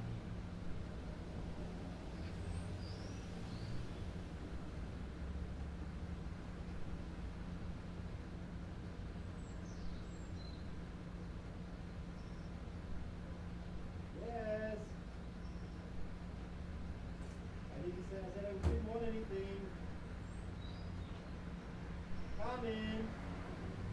Amstelveen morning
early morning iun suburbian Amastelveen bird in the Handkerchief tree
Amstelveen, The Netherlands